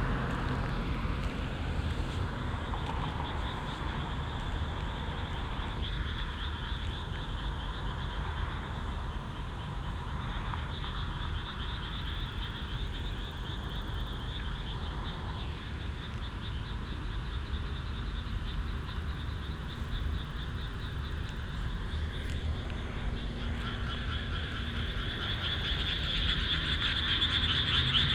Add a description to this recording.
in the night, fro concert in he rice fields - in the distance an ambulance passing by - cars on the street, international city scapes - topographic field recording